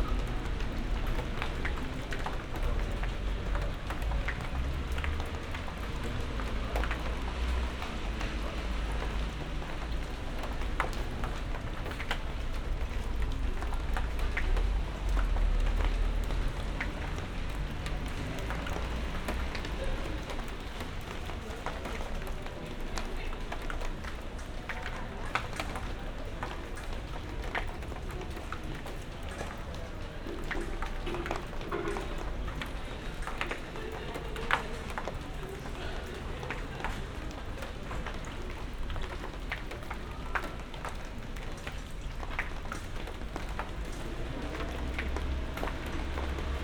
berlin, friedelstraße: unter baugerüst - the city, the country & me: under a scaffold
the city, the country & me: july 7, 2012
99 facets of rain
Berlin, Germany, 19 July